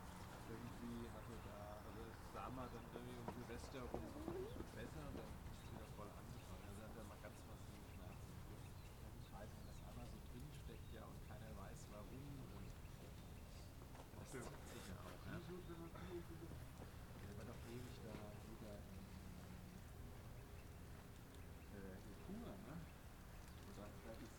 Kiedrich, Deutschland - Gespräch am Parkplatz
Parkplatz Bitter-Eiche
Germany, 20 January 2019, ~16:00